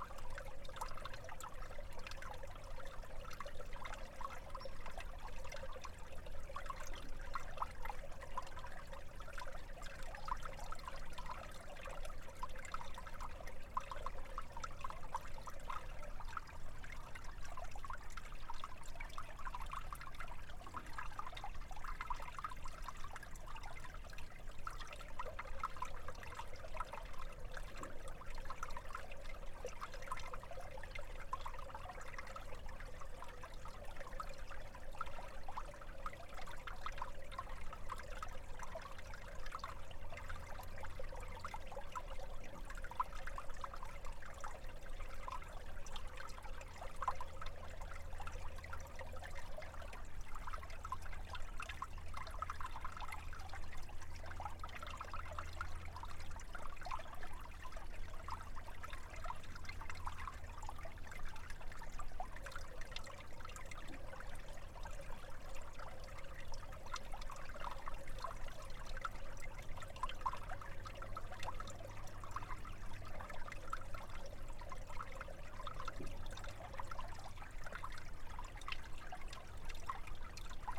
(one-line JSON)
{"title": "Laumeier Sculpture Park Springhouse, St. Louis, Missouri, USA - Laumeier Spring", "date": "2022-01-16 16:43:00", "description": "Recording of water from spring flowing into underground conduit near stone springhouse in Laumeier Sculpture Park", "latitude": "38.55", "longitude": "-90.41", "altitude": "170", "timezone": "America/Chicago"}